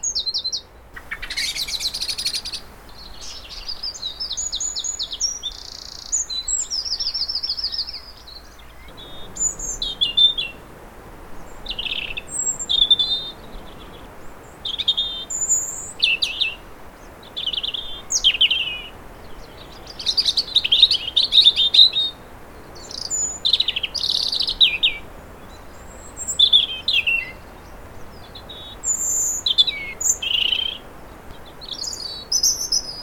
Côtes-d'Armor, France - à pied entre st Brieuc et Paimpol : les oiseaux et la mer
Tréveneuc, France, 8 April 2012, 13:00